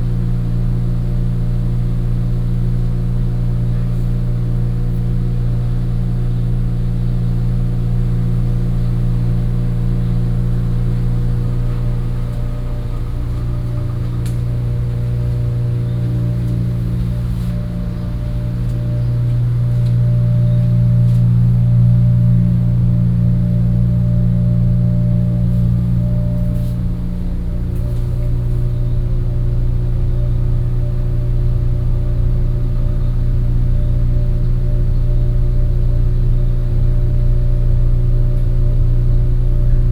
Sanmin, Kaohsiung - In the hotel room